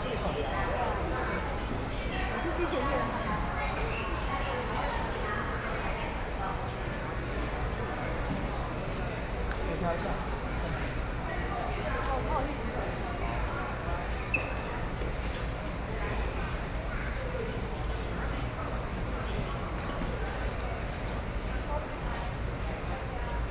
Yilan Station, Yilan City - In the station lobby
In the station lobby, Voice message broadcasting station, A lot of tourists
Sony PCM D50+ Soundman OKM II